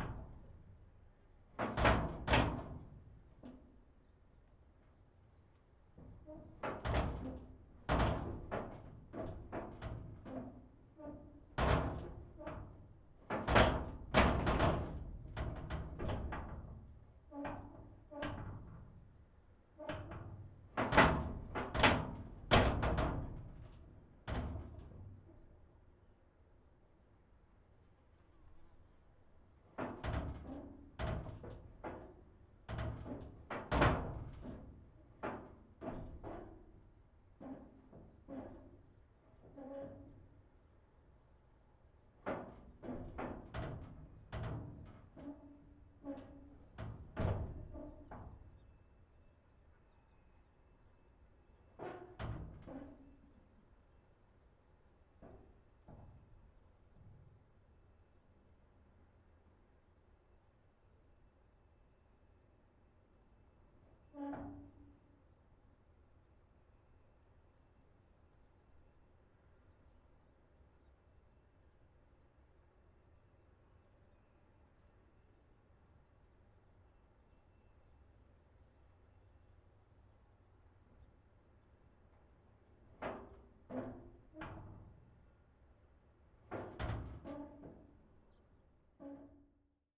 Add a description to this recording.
near fort Bengħajsa, the wind hits an iron door at a seemingly abandoned house. (SD702)